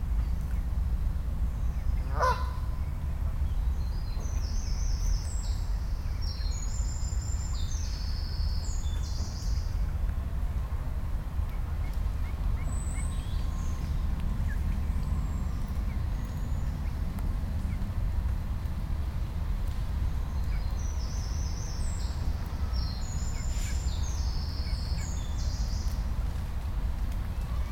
mittags an kleinem stadtteich, regentropfen aus dichtem blätterdach, eine kleine gruppe junger stadtdomestizierter zwitschernder enten
soundmap nrw - social ambiences - sound in public spaces - in & outdoor nearfield recordings